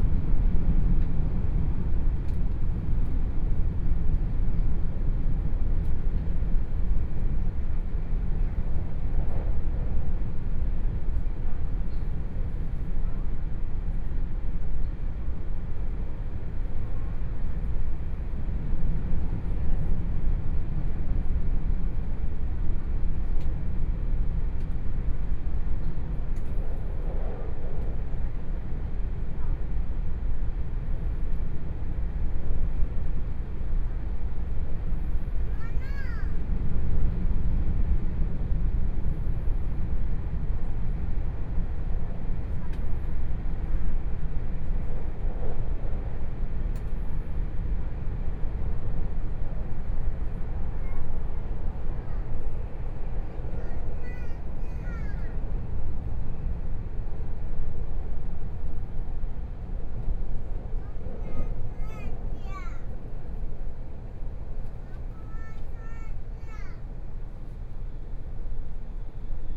Sioulin Township, Hualien County - Puyuma Express
Puyuma Express, Tze-Chiang Train, Interior of the train, North-Link Line, Binaural recordings, Zoom H4n+ Soundman OKM II